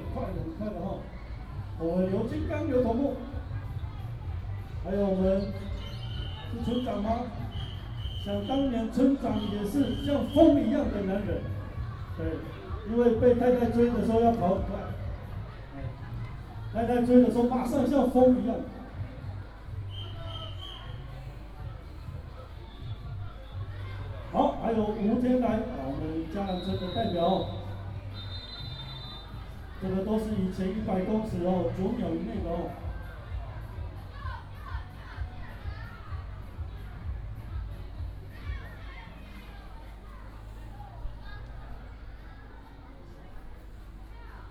School and community residents sports competition
4 April 2018, ~09:00